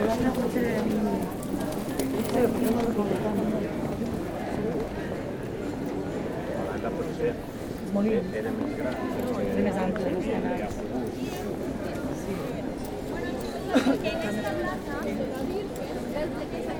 Brugge, België - Guided tour of Bruges
Guided tour of the Brugge city near the Mozarthuys. Very much tourists and a lot of guides showing the way with colourful umbrellas.